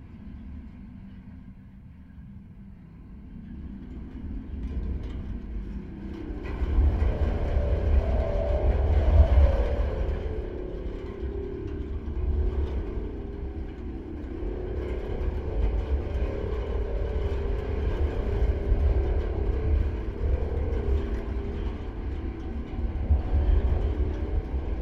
Ben Gulabin, Blairgowrie, UK - wild on Ben Gulabin
Alba / Scotland, United Kingdom, 11 June 2022